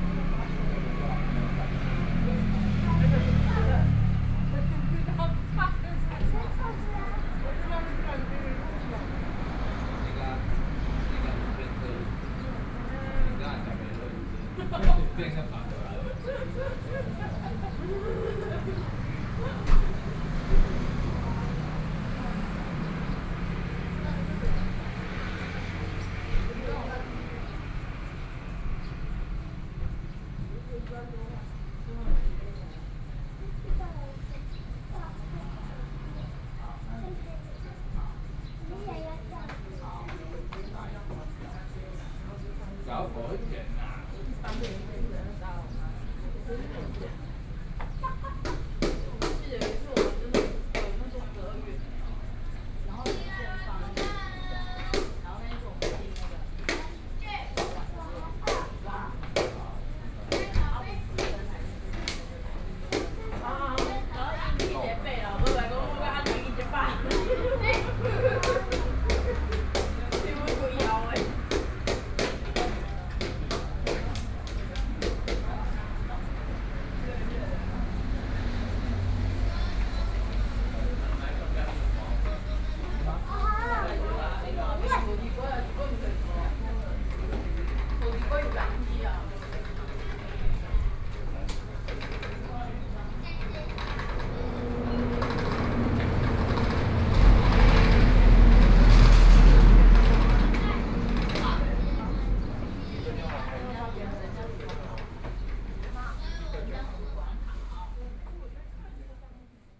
東河村, Donghe Township - Tourists
Bun shop, Tourists, Traffic Sound, The weather is very hot
Donghe Township, 花東海岸公路, 6 September 2014